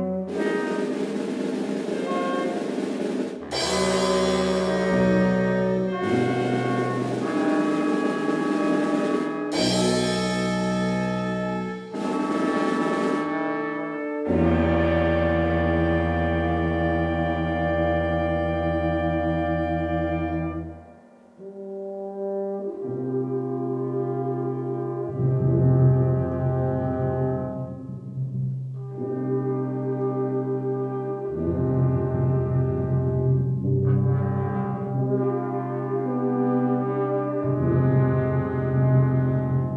Flintsbach, Gemeindekeller - brass band rehearsal, flintsbach
"Blasmusik Flintsbach" (i. e. Brass Ensemble Flintsbach, local amateur brass band) rehearsal of music for theatre piece "Der jüngste Tag" at the rehearsal room. Piece going to be played this summer at the local folk theatre. recorded may 25, 08 - project: "hasenbrot - a private sound diary"